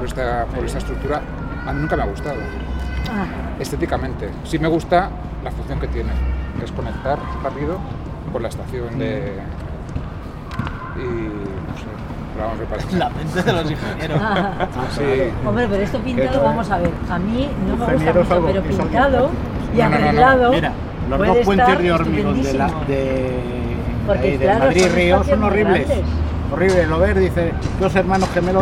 Adelfas, Madrid, Madrid, Spain - Pacífico Puente Abierto - Transecto - 12 - Llegada a Pacífico Puente Abierto. Final de Trayecto
Pacífico Puente Abierto - Transecto - Llegada a Pacífico Puente Abierto. Final de trayecto
7 April 2016